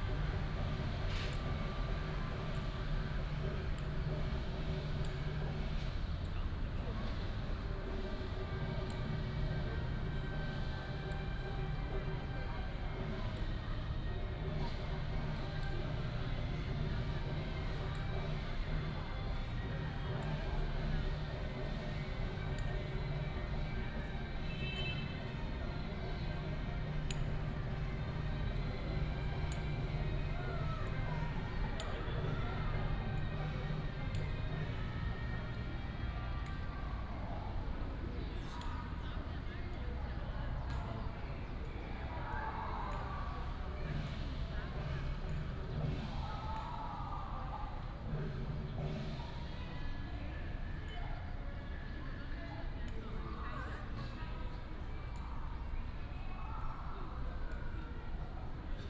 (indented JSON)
{
  "title": "Taipei Confucius Temple, Taiwan - In the square",
  "date": "2017-04-09 17:28:00",
  "description": "In the square, Traffic sound, sound of birds",
  "latitude": "25.07",
  "longitude": "121.52",
  "altitude": "7",
  "timezone": "Asia/Taipei"
}